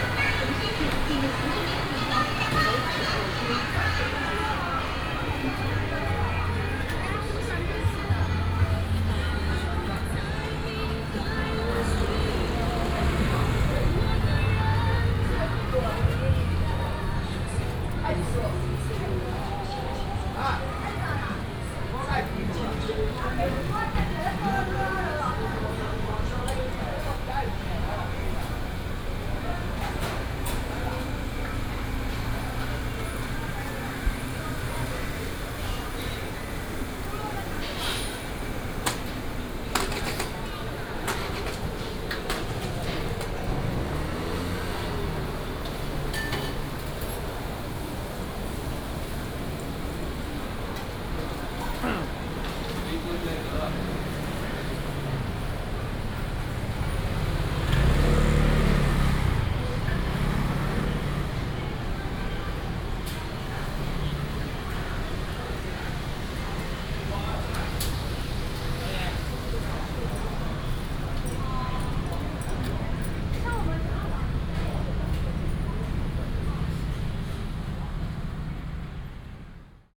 Walking in the night market, Traffic sound
18 November, 17:20